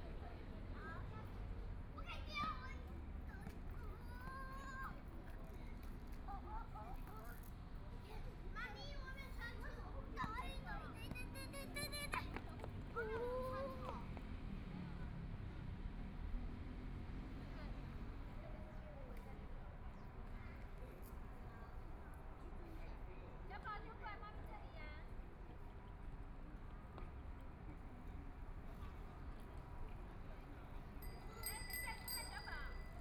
XinXi Park, Taipei City - in the Park
Sitting in the park, Traffic Sound, Kids playing games in the park, Binaural recordings, Zoom H4n+ Soundman OKM II